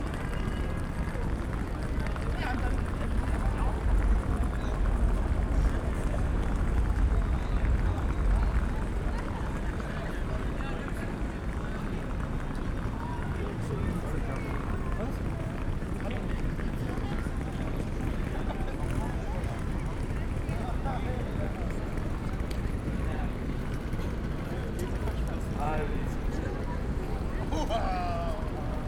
Hbf Bremen - square ambience
Bremen Hbf, main station, Sunday evening ambience on square
(Sony PCM D50, DPA4060)